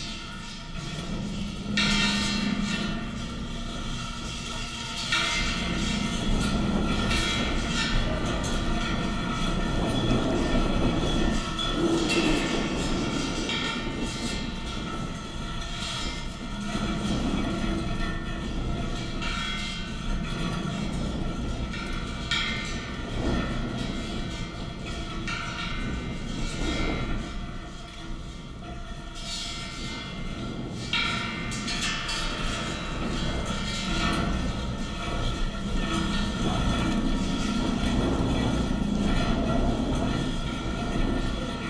fence wires near Silbury Hill and Long Barrow
contact mics on fence wire catching wind and grass sounds